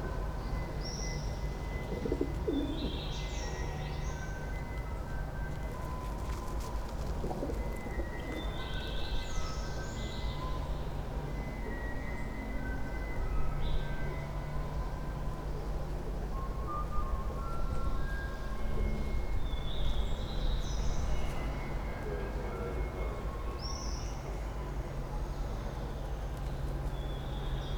from/behind window, Mladinska, Maribor, Slovenia - tinwhistler and pigeons